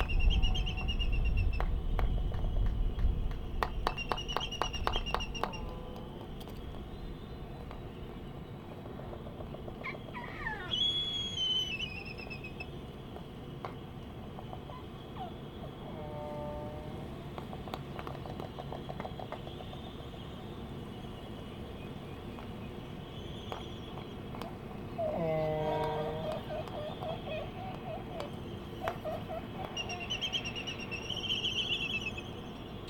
Sand Island ... Midway Atoll ... laysan albatross dancing ... Sony ECM 959 one point stereo mic to Sony Minidisk ... background noise ...